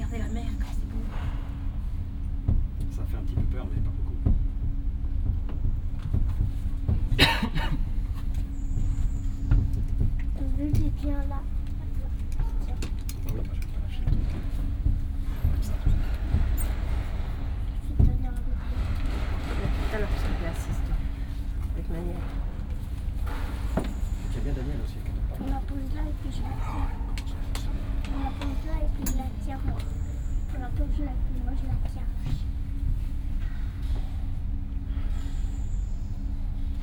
taormina cableway - mazzaro station
this cableway connects taormina with mazzaro bay. max 8 persons per cabin. people awaiting lift to taormina.